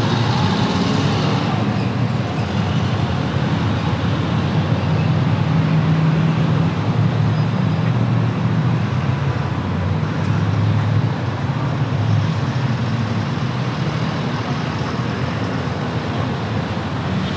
Calle 116 #23-06 Oficina 507 Edificio: Business Center 116 Oficina:, Bogotá, Colombia - Ambience in Bogta
Crowded avenue in Bogota, which has two fundamental sounds like the trafic and the wind. To complete the sound spectrum there are some sound signs like motorcycle, car's horn, motors, reverse beeps and an ambulance. Also for some sound marks, we can hear a lawn mower, car´s breaks (for the traffic lights) and a little bit of voices